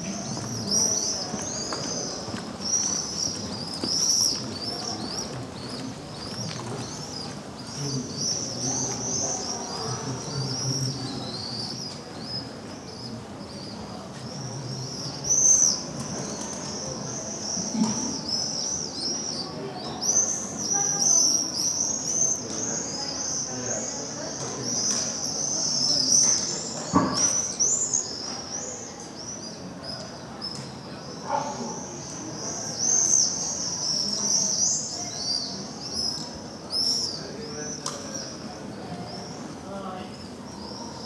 Salamanca_Cathedral, people, spanish, kids, birds, interiors ressonace